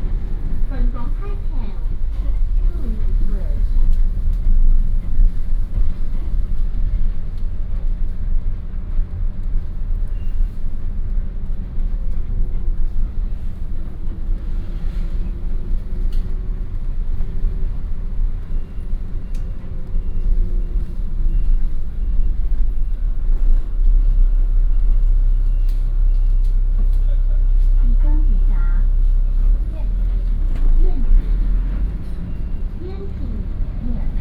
新烏公路, 烏來區忠治里, New Taipei City - Inside the bus
Inside the bus